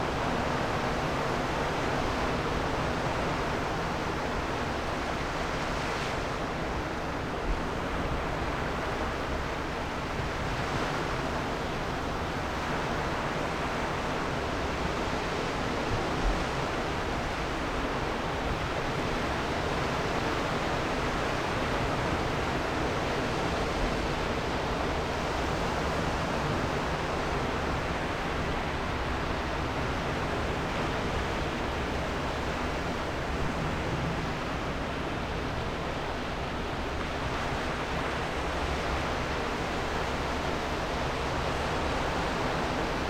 Cleveland Way, Whitby, UK - incoming tide on a slipway ...
incoming tide on a slip way ... SASS ... background noise ... footfall ... dog walkers etc ...